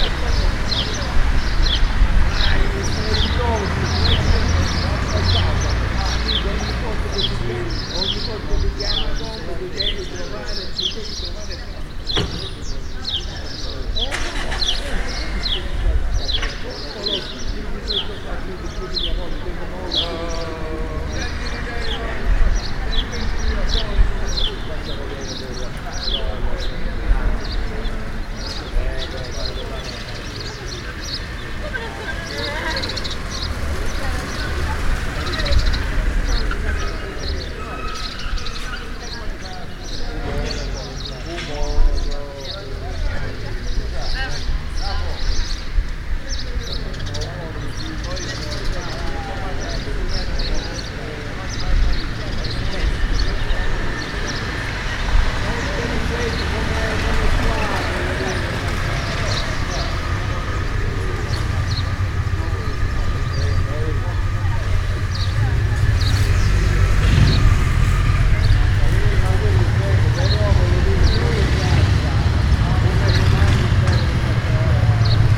Centro Storico P.zza Pisanelli Tricase - Spring Old Afternoon
A spring afternoon in the old part of my city, sitting on a bench of Pisanelli Square, between birds chipping and old man's conversation.
There are also some cars passing by the road all around.